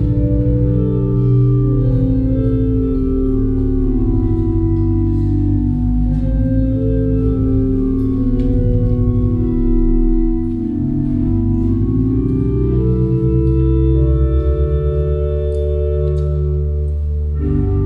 vianden, trinitarier church, mass
Inside the church witnessing an evening mass. The sound of the organ and the singing of the community. Finally a short prayer by Dechant Feltes.
Vianden, Trinitarier-Kirche, Messe
In der Kirche während einer Abendmesse. Das Geräusch der Orgel und das Singen der Kirchengemeinschaft. Am Schluss ein kurzes Gebet vorgetragen von Dechant Feltes.
Vianden, église de la Sainte-Trinité, messe
Dans l’église pendant la messe du soir. Le bruit de l’orgue et le chant de la communauté paroissiale. Pour finir, une courte prière récitée par Dechant Feltes.
Project - Klangraum Our - topographic field recordings, sound objects and social ambiences